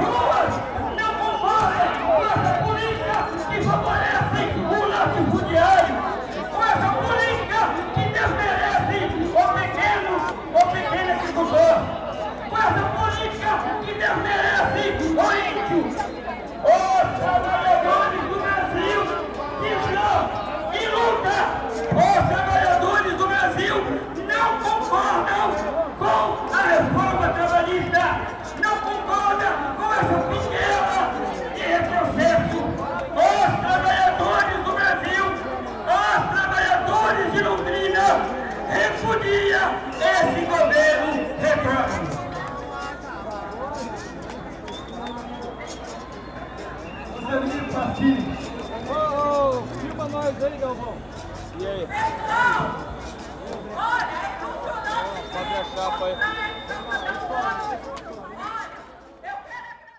Calçadão de Londrina: Greve geral - Greve geral / General strike
Panorama sonoro: participantes de uma greve geral contra as reformas impostas pelo presidente Michel Temer discursavam com apoio de carros de som enquanto um grupo de manifestantes jogava capoeira em meio aos outros. Muitas pessoas participavam da manifestação com apitos e palavras de ordem. A passeata percorreu toda a extensão do Calçadão, atraindo atenção de pessoas que não participavam dela. O comércio aberto, fechou as portas durante a passagem dos grevistas.
Sound panorama: participants in a general strike against the reforms imposed by the Federal Government were speaking with the support of sound cars while a group of demonstrators played capoeira among the others. Many people participated in the demonstration with whistles and slogans. The march ran along the length of the Boardwalk, attracting attention from people who did not participate. The open trade, closed the doors during the passage of the strikers.
28 April 2017, 12:01